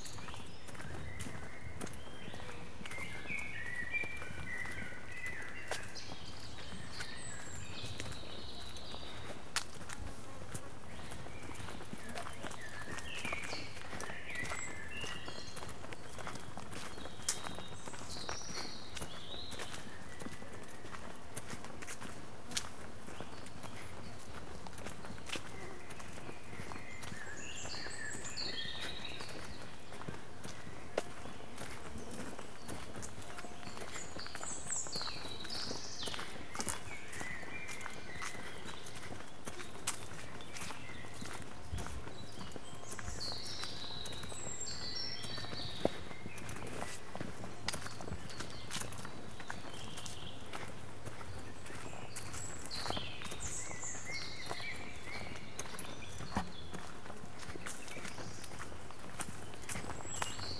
it wasn't possible to record while standing still due to swarms of mosquitoes and other bugs. so forest ambience recorded while working fast and swinging arms to distract the creatures.
Polska, European Union, July 2013